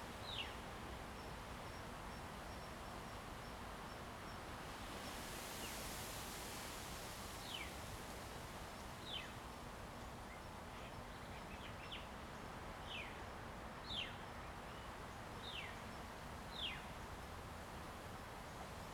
Jinning Township, Kinmen County - Wind and the woods
Birds singing, Wind, In the woods
Zoom H2n MS+XY